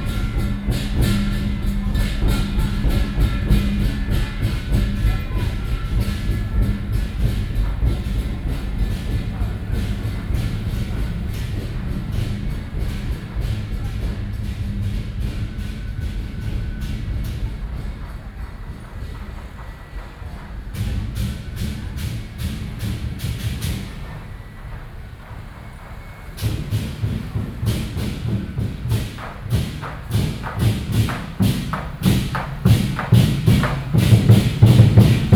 Ln., Sec., Lixing Rd., Sanchong Dist., New Taipei City - Traditional temple festivals